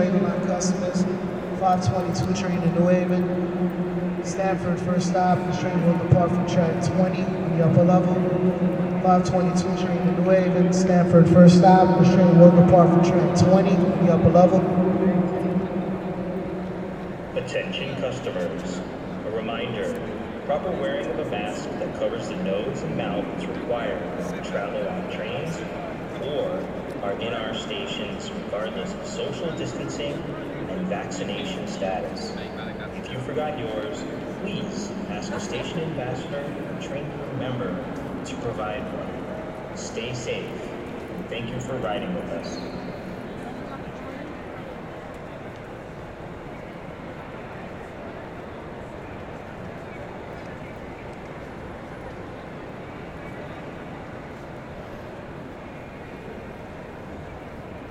Park Ave, New York, NY, USA - Train announcements at Grand Central
Train announcements at Grand Central during rush hour.